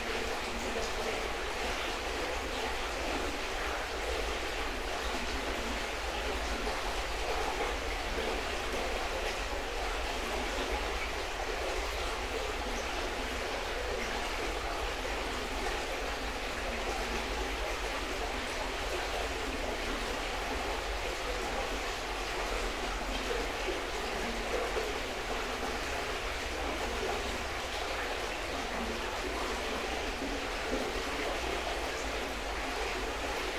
{"title": "Utena, Lithuania, under the small bridge", "date": "2020-10-26 18:00:00", "description": "small omni mics under the bridge", "latitude": "55.48", "longitude": "25.61", "altitude": "108", "timezone": "Europe/Vilnius"}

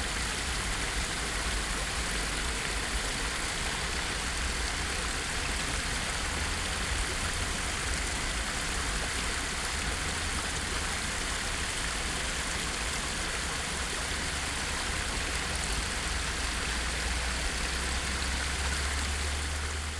{"title": "Maribor, Pekrski potok - small creek", "date": "2012-05-31 14:55:00", "description": "Pekrski potok comes from the Pohorje mountains and flows through parts of the city. the little stream isn't in a good condition\n(SD702 DPA4060)", "latitude": "46.54", "longitude": "15.62", "altitude": "281", "timezone": "Europe/Ljubljana"}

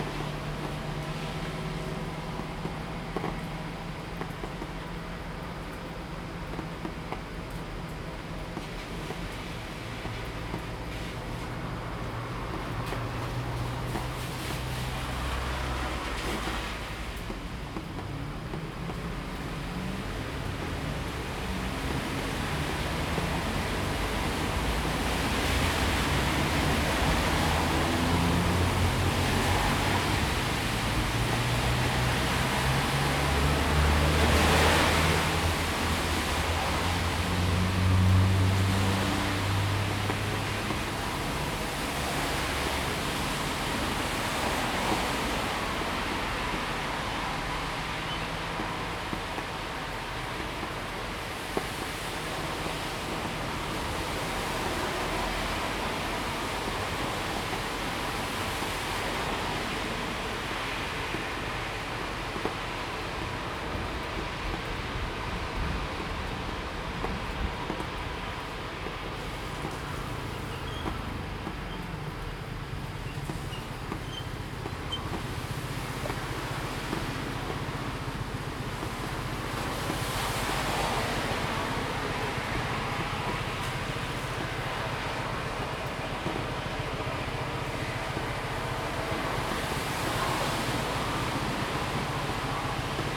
{"title": "桃米里, Puli Township - Rainy Day", "date": "2015-08-25 17:45:00", "description": "Rainy Day, Traffic Sound\nZoom H2n MS+XY", "latitude": "23.94", "longitude": "120.93", "altitude": "475", "timezone": "Asia/Taipei"}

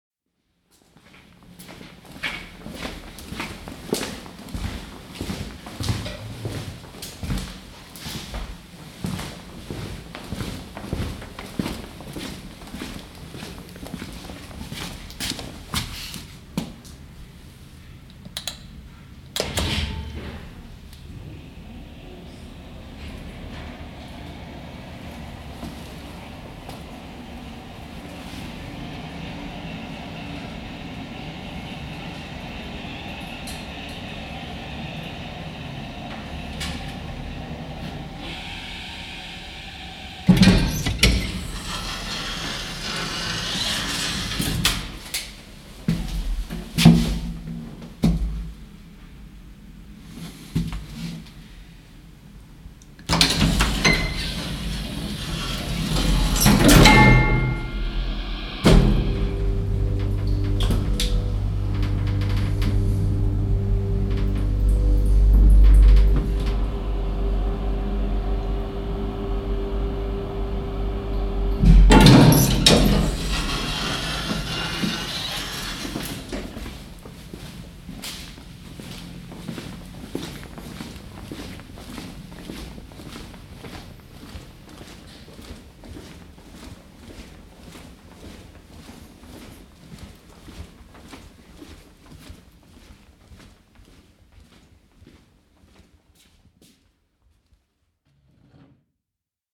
{"title": "Skogsby, Färjestaden, Sverige - Sound of elevator", "date": "2022-07-07 12:21:00", "description": "The soundstory of someone walking up to and entering an elevator and using it. Øivind Weingaarde.", "latitude": "56.63", "longitude": "16.51", "altitude": "39", "timezone": "Europe/Stockholm"}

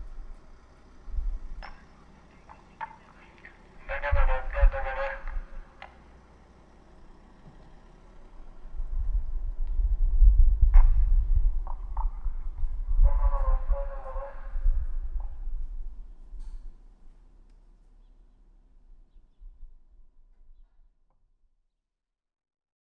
{"title": "Borne Sulinowo, Polska - seller of gas bootles", "date": "2015-07-21 07:20:00", "description": "Every day, between 7 and 8 this man is doing the rounds around the city. It sells gas bottles and by the voice informs people about this fact. It sounds like a mantra.", "latitude": "53.58", "longitude": "16.55", "altitude": "145", "timezone": "Europe/Warsaw"}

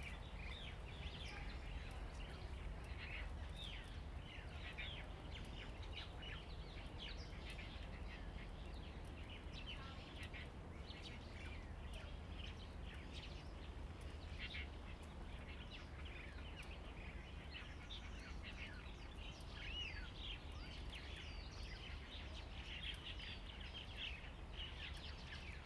{"title": "鐵漢堡, Lieyu Township - Abandoned military sites", "date": "2014-11-04 08:34:00", "description": "Birds singing, Traffic Sound, Abandoned military sites\nZoom H2n MS+XY", "latitude": "24.45", "longitude": "118.26", "altitude": "15", "timezone": "Asia/Shanghai"}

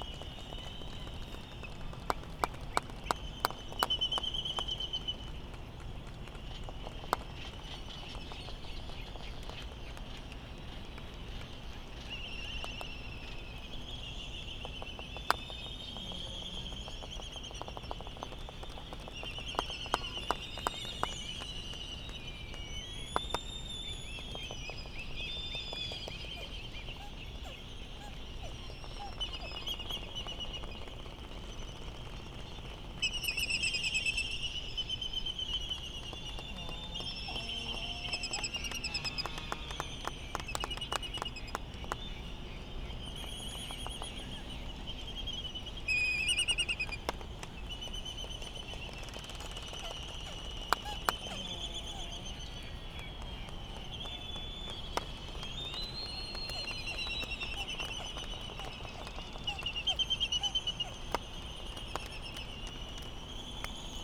{"title": "United States Minor Outlying Islands - Laysan albatross soundscape ...", "date": "2012-03-13 06:13:00", "description": "Laysan albatross soundscape ... Sand Island ... Midway Atoll ... laysan calls and bill clapperings ... white tern calls ... open lavalier mics ... warm ... slightly blustery morning ...", "latitude": "28.22", "longitude": "-177.38", "altitude": "14", "timezone": "Pacific/Midway"}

